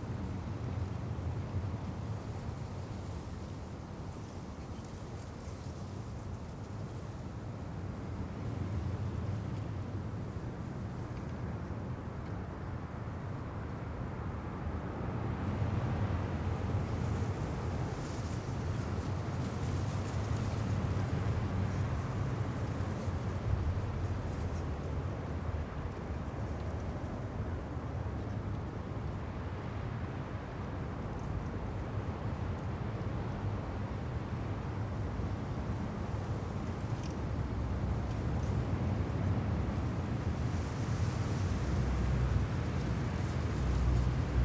Contención Island Day 35 inner west - Walking to the sounds of Contención Island Day 35 Monday February 8th
The Poplars
East wind
blowing snow
what is traffic noise
what is the wind
A tracery of dead ivy
laces the tree trunks
The wall pillar
leans out at an angle
8 February 2021, 09:21